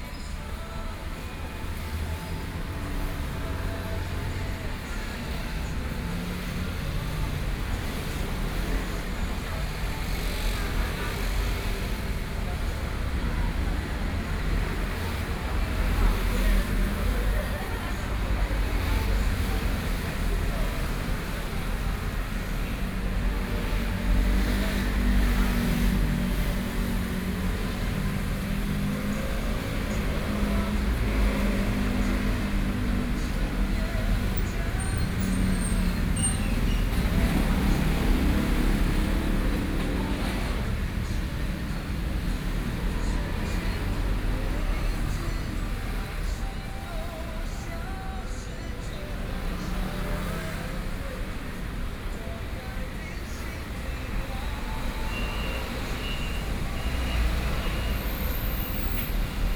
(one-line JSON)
{"title": "Sec., Mingzhi Rd., Taishan Dist. - In front of the restaurant", "date": "2013-12-24 18:47:00", "description": "In front of the restaurant, Traffic Sound, Binaural recordings, Zoom H6+ Soundman OKM II", "latitude": "25.04", "longitude": "121.42", "altitude": "16", "timezone": "Asia/Taipei"}